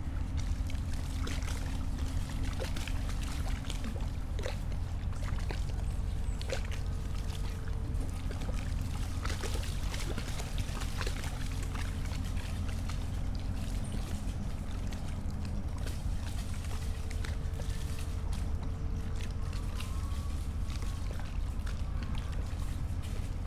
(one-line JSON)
{"title": "Berlin, Plänterwald, Spree - Saturday near river ambience", "date": "2014-10-18 11:50:00", "description": "place revisited, ambience on a rather warm autumn Saturday around noon, cement factory at work, boats passing, waves.\n(SD702, DPA4060)", "latitude": "52.49", "longitude": "13.49", "altitude": "23", "timezone": "Europe/Berlin"}